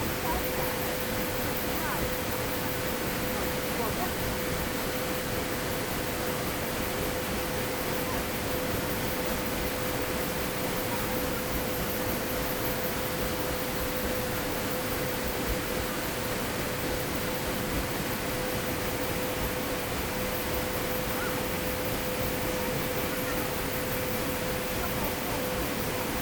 Limburg an der Lahn, Deutschland - Obermühle, river Lahn, water mill
sound of the water mill below the cathedral, nowadays used as a small generator
(Sony PCM D50, DPA4060)
Limburg, Germany, 13 July 2014